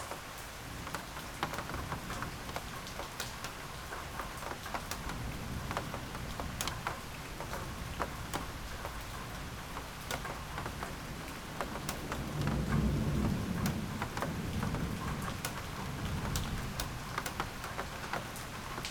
rain and thunder at night
(Sony PCM D50)